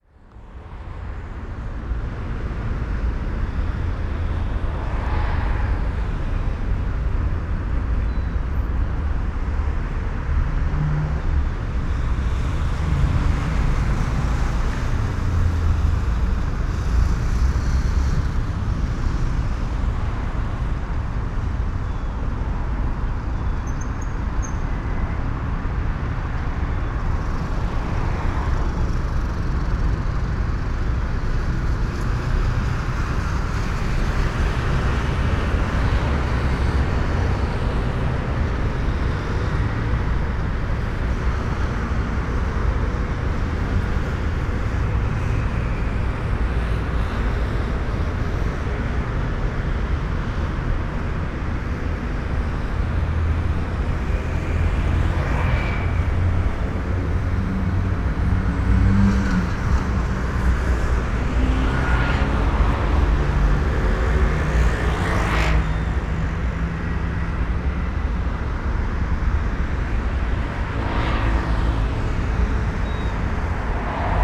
between Via Francesco Salata and Via dell'Istria, Trieste - heavy car traffic and seagulls
stairs walk from Via Francesco Salata to Via dell'Istria
Trieste, Italy, 6 September 2013